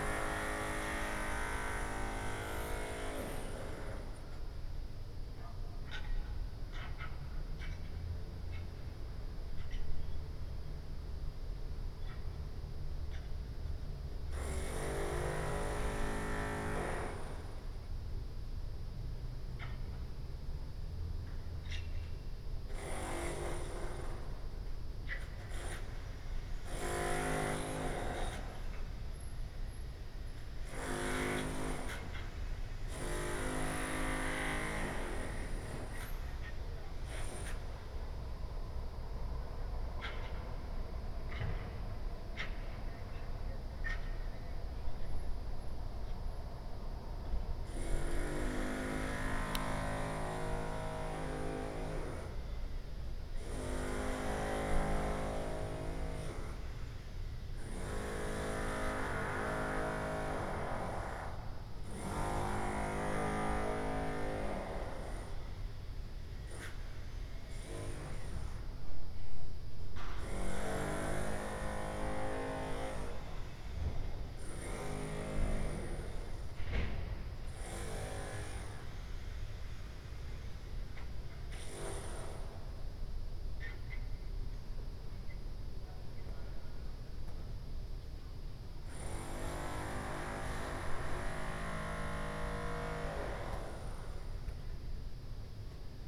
Ascolto il tuo cuore, città. I listen to your heart, city. Several chapters **SCROLL DOWN FOR ALL RECORDINGS** - Morning Autumn terrace in A-flat in the time of COVID19 Soundscape
"Morning Autumn terrace in A-flat in the time of COVID19" Soundscape
Chapter CXLI of Ascolto il tuo cuore, città. I listen to your heart, city
Thursday November 12th, 2020. Fixed position on an internal terrace at San Salvario district Turin, sixth day of new restrictive disposition due to the epidemic of COVID19.
Start at 10:30 a.m. end at 10:52 a.m. duration of recording 22'06''
Piemonte, Italia, 12 November